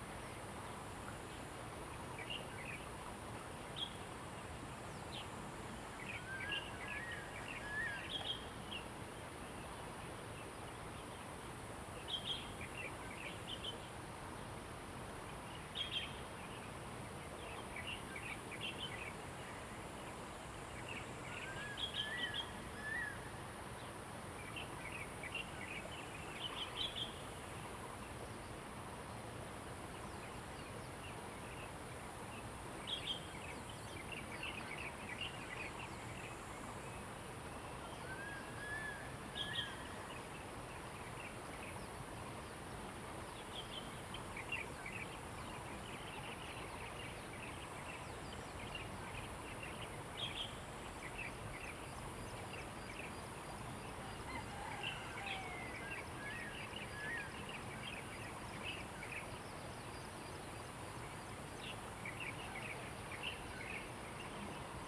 Bridge, Bird sounds
Zoom H2n MS+XY